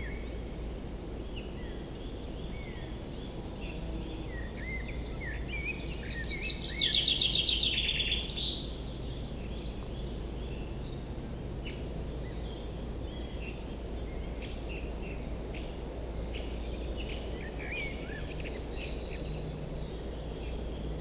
Villers-la-Ville, Belgique - Common Chaffinch
In the woods, the repetitive but pleasant call from the Common Chaffinch. It's springtime, this bird is searching a wife ;-)